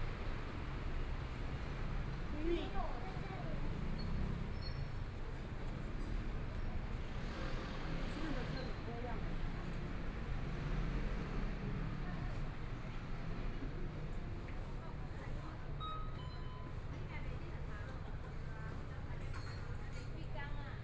walking In the Street, Traffic Sound, Motorcycle Sound, Clammy cloudy, Binaural recordings, Zoom H4n+ Soundman OKM II
Taipei City, Taiwan, 10 February 2014, 15:25